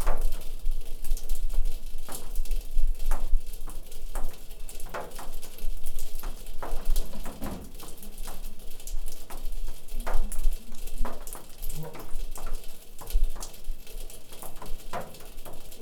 Innstraße, Innsbruck, Österreich - Tropfkonzert Winter/Schnee
The snow is melting from the rooftop and celebrates itself in music (Zoom H5-XYH-5)